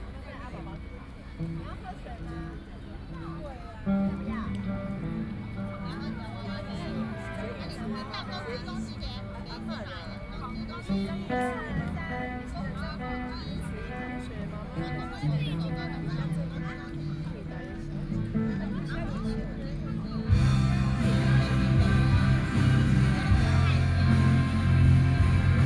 Taipei EXPO Park - Taiwan rock band Fair
27 October 2012, 16:37